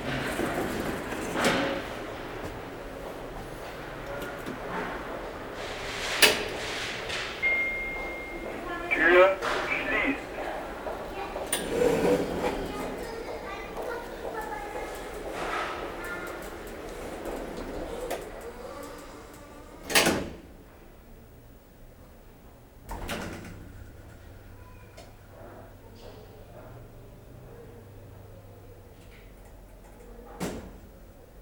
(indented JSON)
{
  "title": "Wittenau, S-Bahn - Aufzug / elevator",
  "date": "2009-03-18 19:20:00",
  "description": "18.03.2009 19:20 elevator, train arriving, footsteps",
  "latitude": "52.60",
  "longitude": "13.34",
  "altitude": "47",
  "timezone": "Europe/Berlin"
}